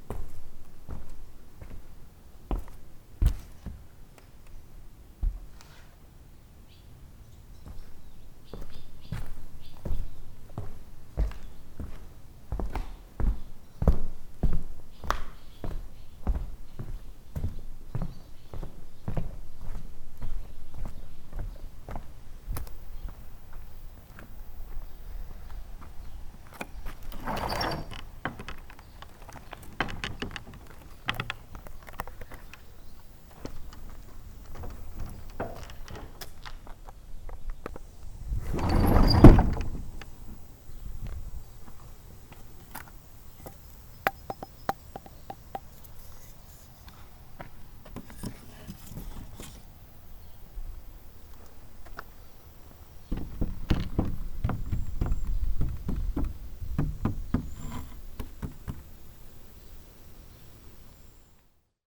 Grass Lake Sanctuary - Barn Sounds
The barn was built in the late 1800s. These are the sounds of me pushing open the door and walking around inside...